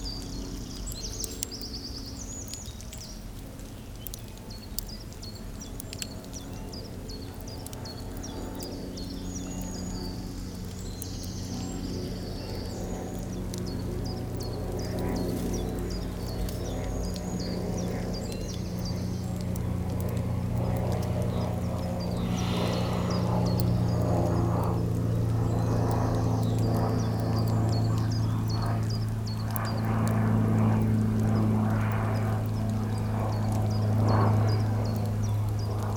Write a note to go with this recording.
A quite uncommon sound... I was walking threw the forest. My attention was drawn to a strange sound in the pines, it was a permanent and strong cracking. I through of the pines, but, going near the trunks, I heard nothing. It was coming from the ground, into the ground or perhaps near the ground. I through about insects, but digging, there were nothing special. In fact, it's the ferns growing. Wishing to understand, I put a contact microphone into the ground, crackings were here too. Also, I went back in this forest by night, and there were nothing excerpt a beautiful moon. Crackings are here only with the sun, and (almost) only in the thick and dense heaps of dead ferns. Digging into, there's small green young ferns. To record this sound, I simply put two binaural microphones in an heap of dead ferns. I guess the crackings comes from the new ferns, pushing hardly the ground into the humus.